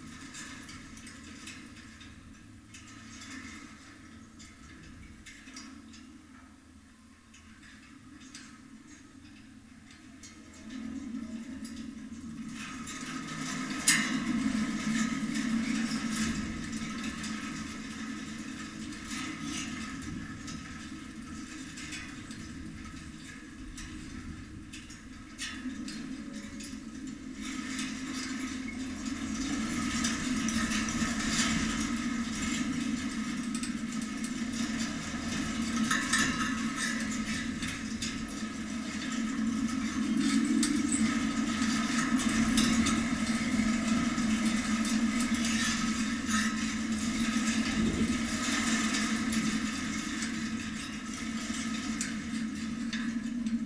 Lithuania, Vilnius, metallic ring-fence
metallic fence with contact microphones